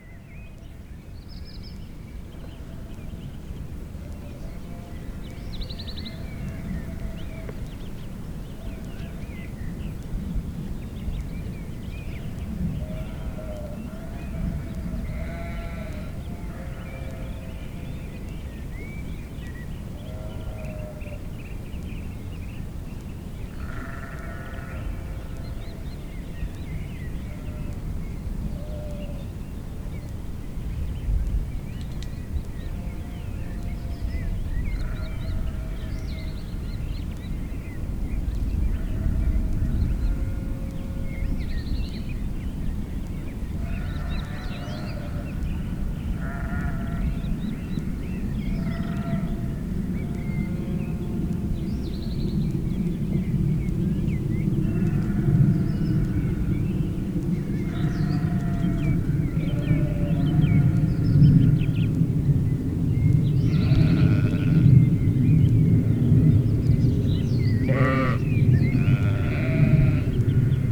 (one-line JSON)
{"title": "sheeps in cimice valey", "date": "2011-05-03 11:51:00", "description": "sounds of grazing sheeps in the nature reservation in Cimice", "latitude": "50.14", "longitude": "14.42", "altitude": "282", "timezone": "Europe/Prague"}